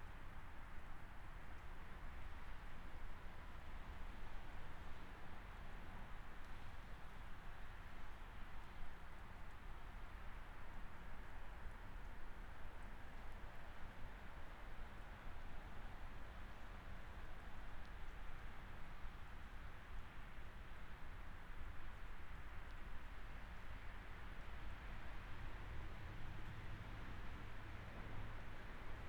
Bald Eagle Regional Park, County Rd, White Bear Township, MN, USA - Bald Eagle Regional Park
Ambient sounds of the parking lot of the Bald Eagle Regional park. Road noise from nearby Highway 61, some birds, and vehicles coming into the parking lot can be heard.
Recorded using a Zoom H5
Minnesota, United States